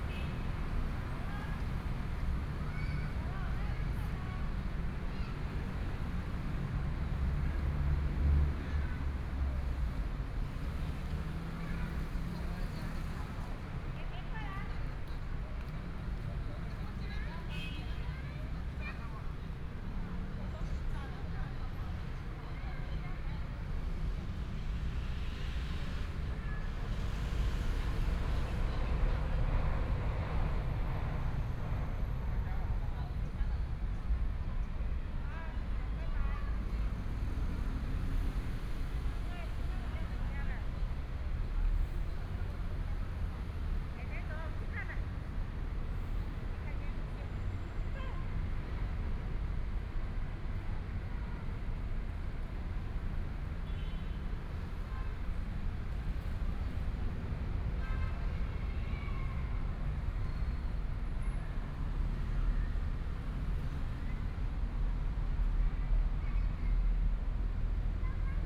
內湖區港富里, Taipei City - in the Park
Sitting in the park, Fireworks sound, Footsteps, Aircraft flying through, Traffic Sound
Please turn up the volume a little. Binaural recordings, Sony PCM D100+ Soundman OKM II
Taipei City, Taiwan, 12 April 2014